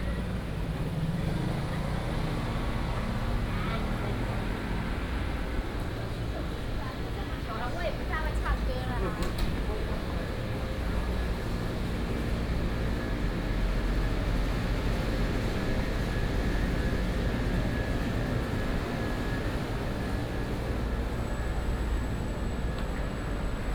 Old traditional market, Walking on the first floor of the old market